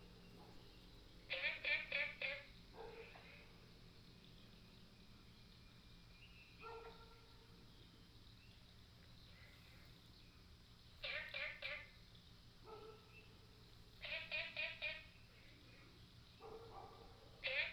綠屋民宿, Puli Township - Frogs sound
Frogs sound, at the Hostel
Nantou County, Taiwan